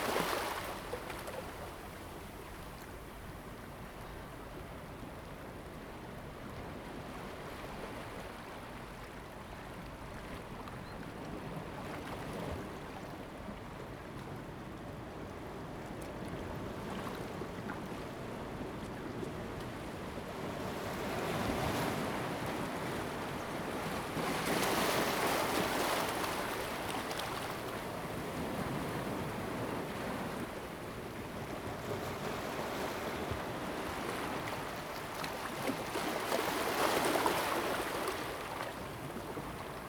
Jizatay, Ponso no Tao - Small pier
Small pier, Traditional Aboriginal tribal marina, Sound of the waves
Zoom H2n MS +XY